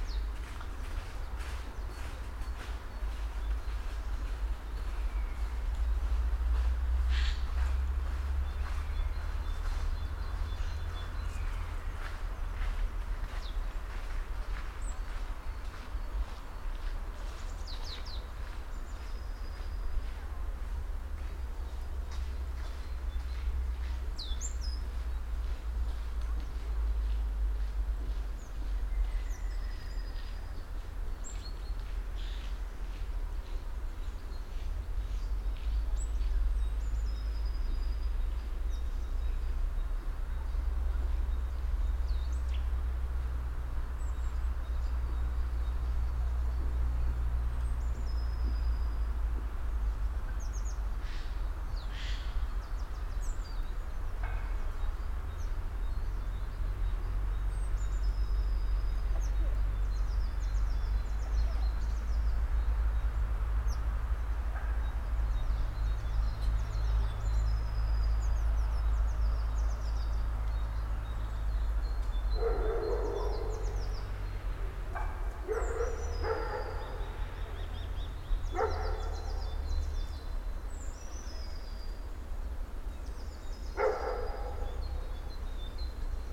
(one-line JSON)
{"title": "Martha-Stein-Weg, Bad Berka, Deutschland - Early Spring in Germany", "date": "2021-02-20 14:00:00", "description": "Binaural recording of a feint sign of early Spring 2021 in a Park in Germany. Best spatial imaging with headphones.\nRecording technology: BEN- Binaural Encoding Node built with LOM MikroUsi Pro (XLR version) and Zoom F4.", "latitude": "50.90", "longitude": "11.29", "altitude": "276", "timezone": "Europe/Berlin"}